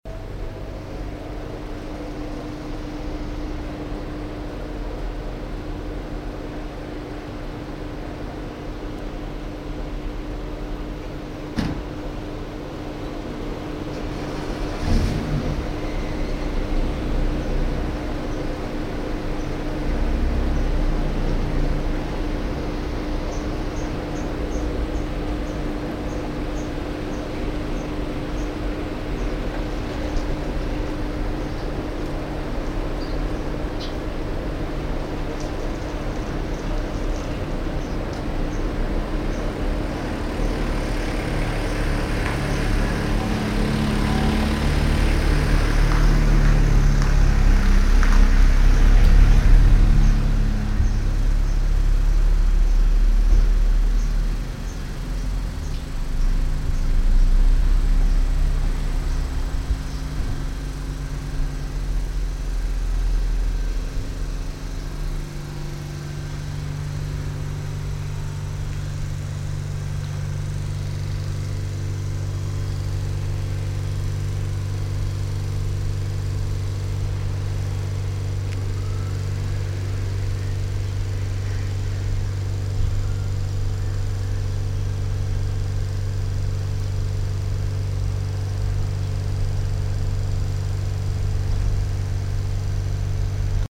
grosse klimalüftung unter holzüberdachung nahe dem zentralen city parkplatz
fieldrecordings international: social ambiences/ listen to the people - in & outdoor nearfield recordings